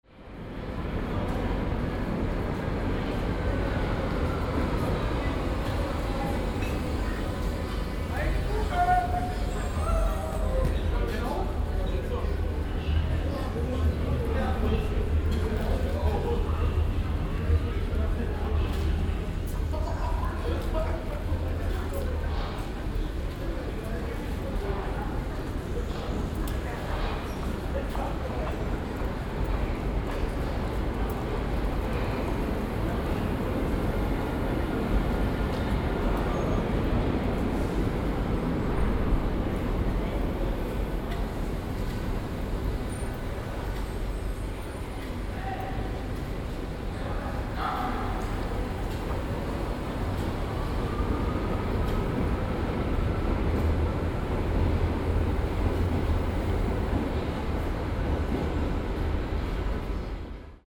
Alexanderplatz - Subway
U-Bahnhof Berlin Alexanderplatz. Aporee Workshop CTM.
Berlin, Germany